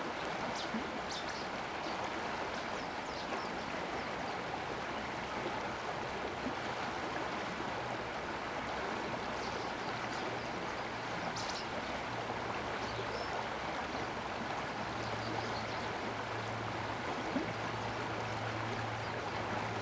桃米溪, 桃米里Nantou County - Stream
Stream
Zoom H2n MS+XY